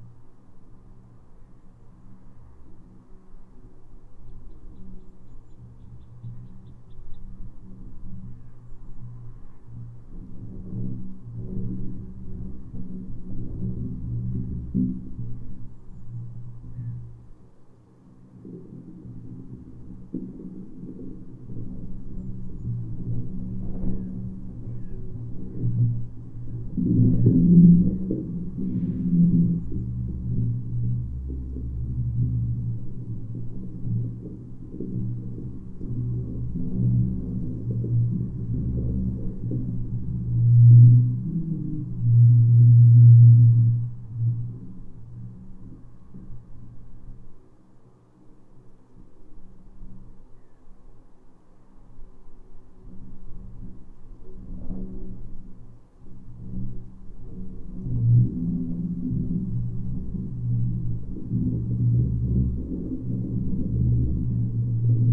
slagbaum made from long metallic pipe. small microphones places inside. mild wind.
Utenos apskritis, Lietuva